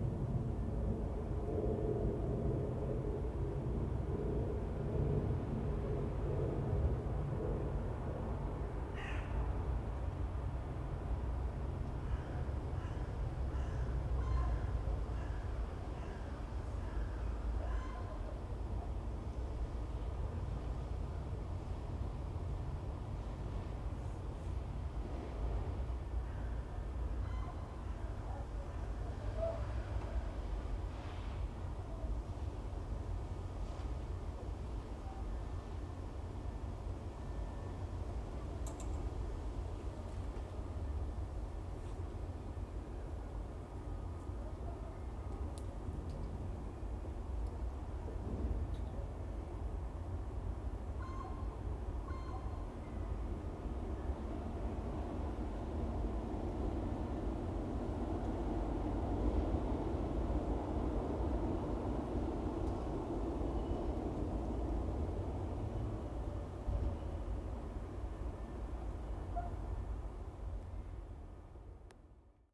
Sint-Jans-Molenbeek, Belgium - Verheyden walk3 quiet corner opposite ChiShaSahara bar
At this point in the corner of 2 walls the sounds of the busy Weststation are no longer distinguishable from the general Brussels background. Here the soundscape is quiet, only a few passing cars and a crow circling.
Anderlecht, Belgium, October 2016